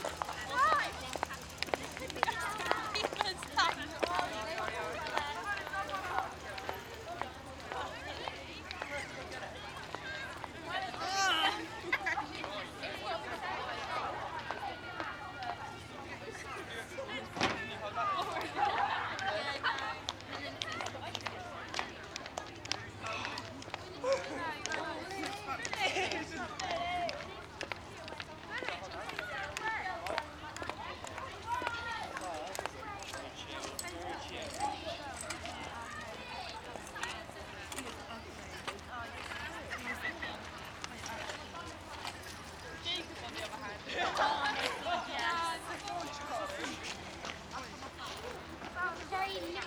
Sandbanks Beach, Dorset - People passing on the prominade, Sandbanks.
Late March, sunny weather on the beach. Recorded on a Fostex FR-2LE Field Memory Recorder using a Audio Technica AT815ST and Rycote Softie
30 March 2012, 13:03